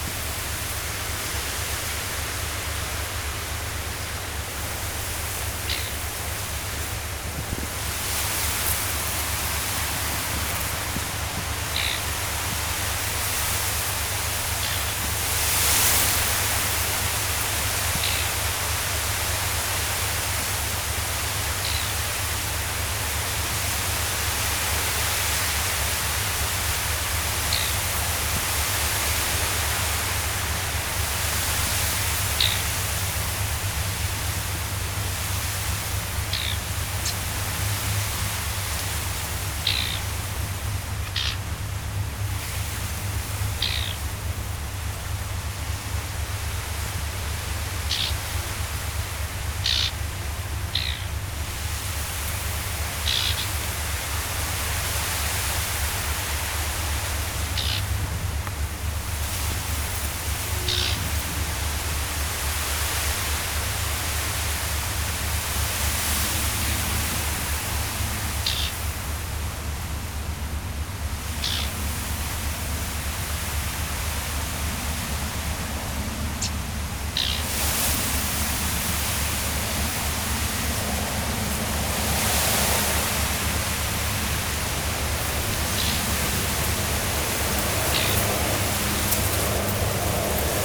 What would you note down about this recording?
Wind in the reeds, in front of the Seine river. The discreet bird is a Eurasian reed warbler.